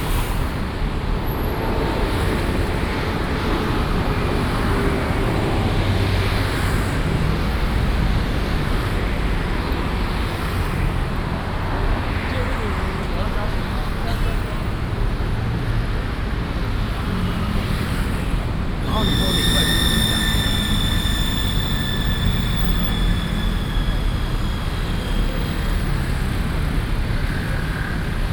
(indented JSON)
{
  "title": "公館站, Taipei City - walk into the MRT station",
  "date": "2016-03-04 18:11:00",
  "description": "Traffic Sound, walk into the MRT station",
  "latitude": "25.02",
  "longitude": "121.53",
  "altitude": "22",
  "timezone": "Asia/Taipei"
}